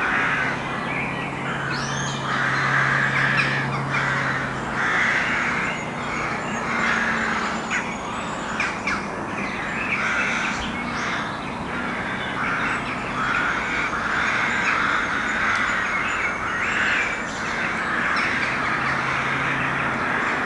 Rathgannon, Warrenpoint, Newry, UK - Rathgannon Competing Crow Colonies
Recorded with a Roland R-26 and a pair of DPA 4060s
26 May 2018, ~20:00